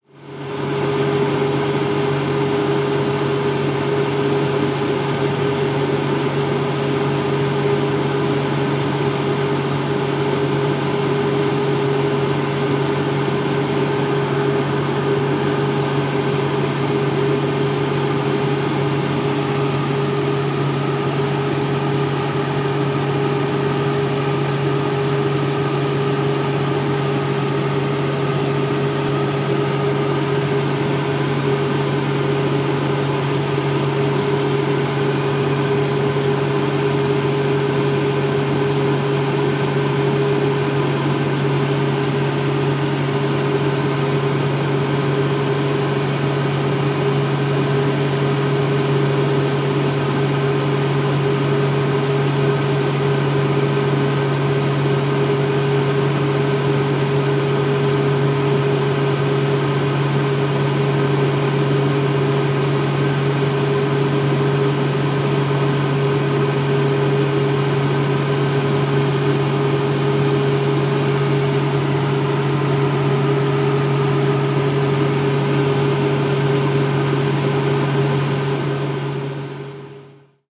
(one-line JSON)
{"title": "Neringos sav., Lithuania - Navigational Tower", "date": "2016-07-31 12:07:00", "description": "Recordist: Raimonda Diskaitė\nDescription: Near a navy navigation tower near the beach on a sunny day. Industrial sound, wind and insects in the background. Recorded with ZOOM H2N Handy Recorder.", "latitude": "55.32", "longitude": "20.99", "altitude": "2", "timezone": "Europe/Vilnius"}